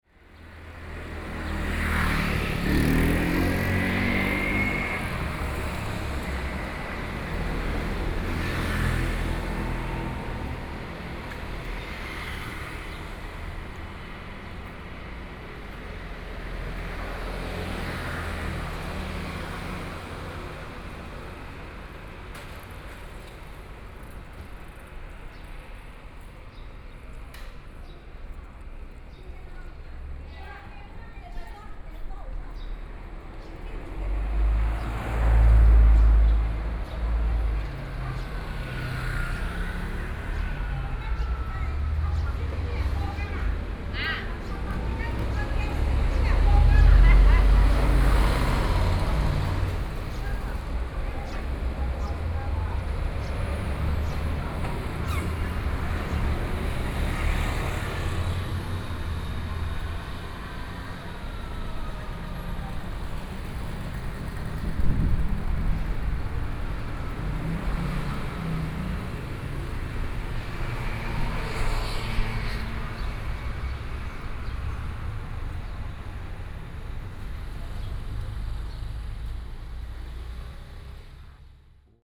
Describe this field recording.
Traffic Sound, Walking in the alley